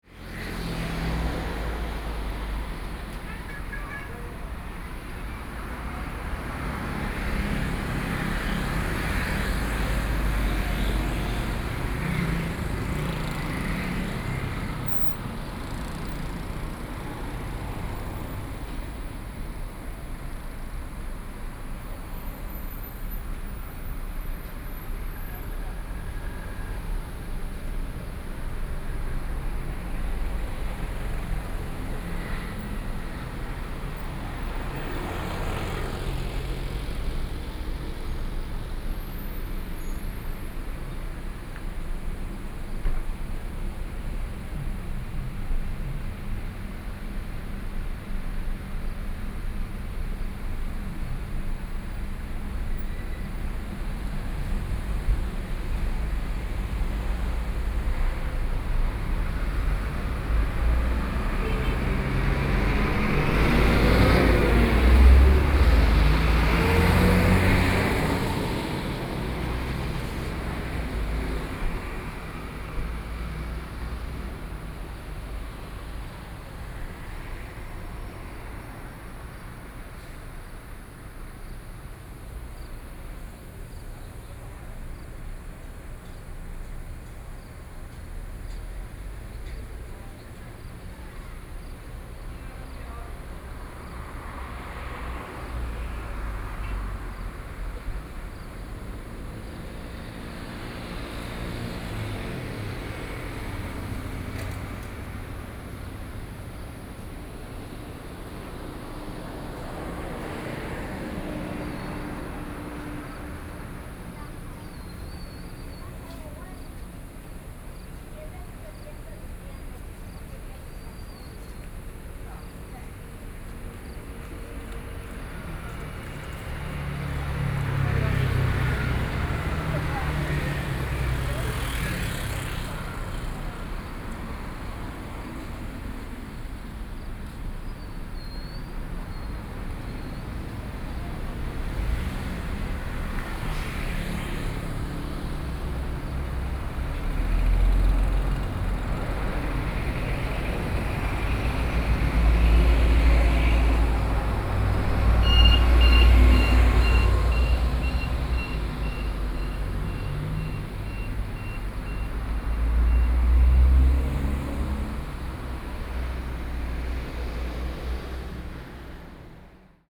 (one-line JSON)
{"title": "富泉飯店, 礁溪鄉德陽村 - Traffic Sound", "date": "2014-07-21 18:56:00", "description": "In front of the hotel, Traffic Sound\nSony PCM D50+ Soundman OKM II", "latitude": "24.83", "longitude": "121.77", "altitude": "14", "timezone": "Asia/Taipei"}